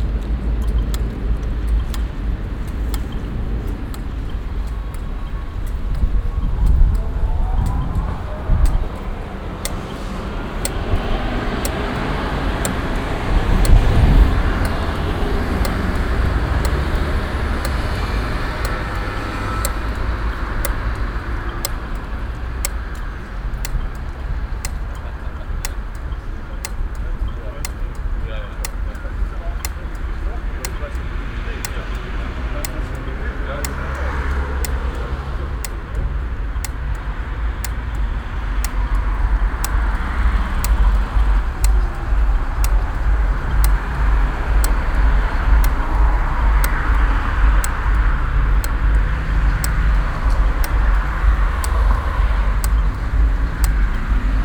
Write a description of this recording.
nachmittags an ampelanlage - stereophones klicken im strassenverkehr, soundmap nrw - social ambiences - sound in public spaces - in & outdoor nearfield recordings